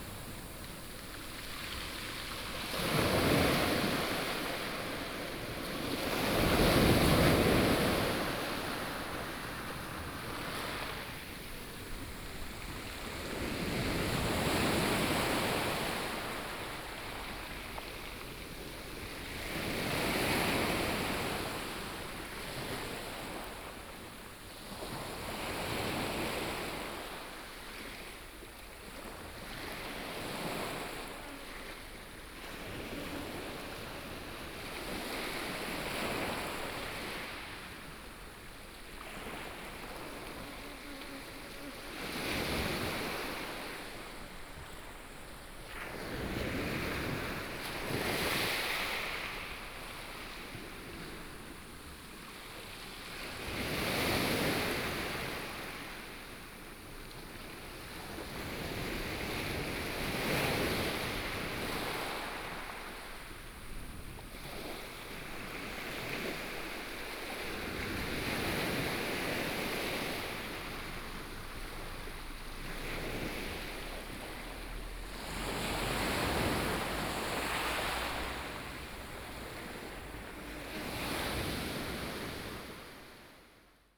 In a small port, Sound of the waves, Very hot days